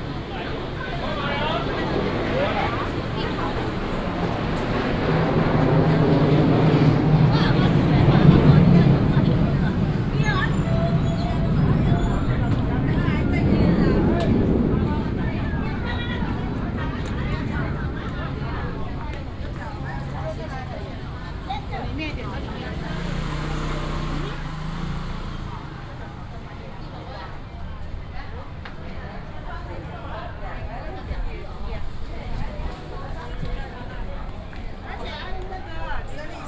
Fenglian St., Xinfeng Township - vendors peddling
vendors peddling, Traditional market, The plane flew through
Xinfeng Township, Hsinchu County, Taiwan, 17 August, 10:33